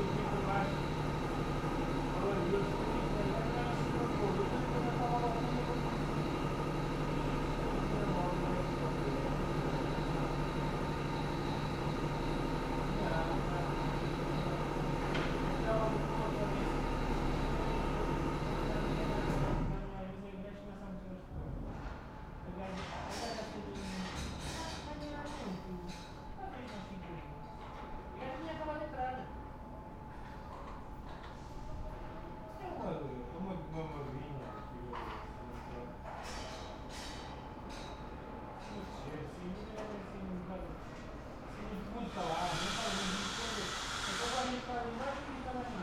lisbon, calcada do lavra - cable car station
upper station of the tram. one waggon departing downwards, the other one arriving. echos of nearby construction workers in this narrow street.
1 July 2010, ~13:00